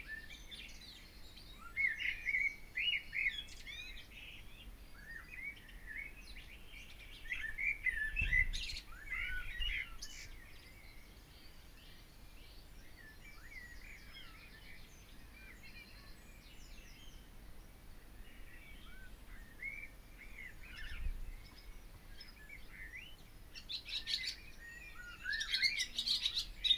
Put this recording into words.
On the guttering ... a swallow ... bird singing on the guttering above the back door ... nest is some 10m away ... recorded using Olympus LS 14 integral mics ... bird song from blackbird and song thrush ... some background noise ...